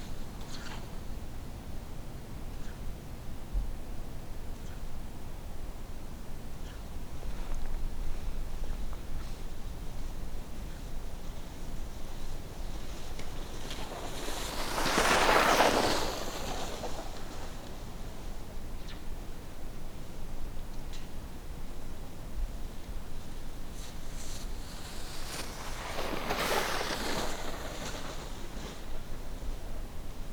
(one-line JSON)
{"title": "Gammlia Forest, Umeå - Skiiers out on a Sunday in Gammlia Forest, Umeå", "date": "2011-01-23 11:41:00", "description": "Passing from right to left on a slight downhill, the cross country skiers pass with sounds of the skis swishing and their poles pinging.", "latitude": "63.83", "longitude": "20.29", "altitude": "64", "timezone": "Europe/Stockholm"}